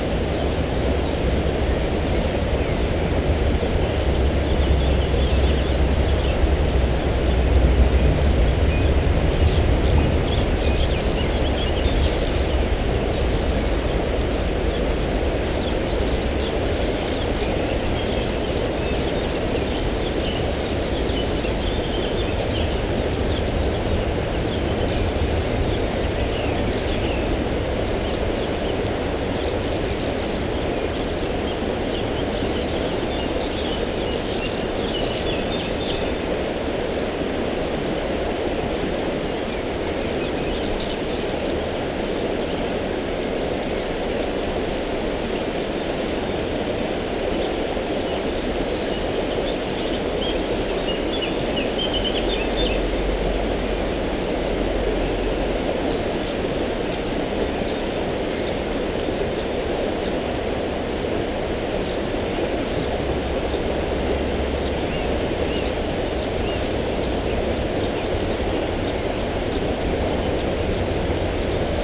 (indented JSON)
{
  "title": "Volarje, Tolmin, Slovenia - Soča near vilage Volarje",
  "date": "2020-05-24 09:42:00",
  "description": "River soča and some birds singing.\nRecorded with ZOOM H5 and LOM Uši Pro, Olson Wing array. Best with headphones.",
  "latitude": "46.21",
  "longitude": "13.67",
  "altitude": "171",
  "timezone": "Europe/Ljubljana"
}